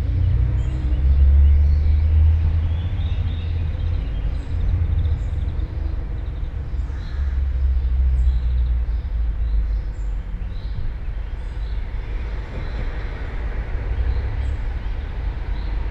all the mornings of the ... - jun 3 2013 monday 07:31
Maribor, Slovenia